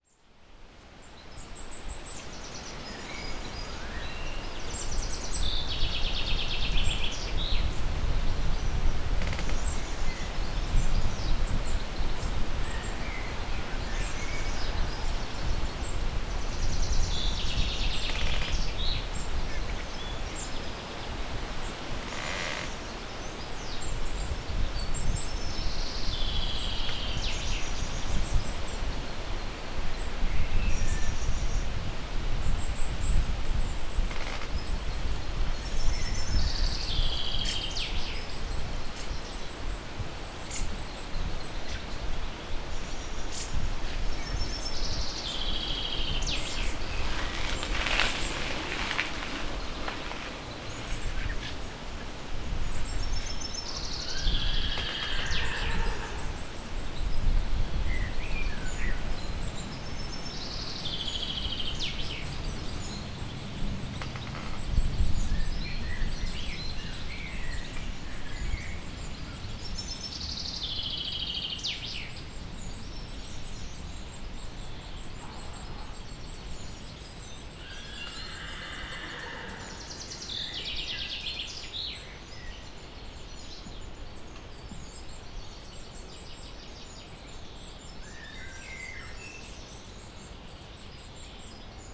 Strzeszyn, bike road along Bogdanka stream - tree near horse riding club
while riding the bike a crackling tree caught my attention. it didn't crackle for long but I was able to records some neighing of the horses nearby as well as a few bikers as well as always intriguing bird chirps. another plane takes off from the airport 3km away and another train passes on the tracks about 500m away (sony d50)
Poznań, Poland, 7 June 2018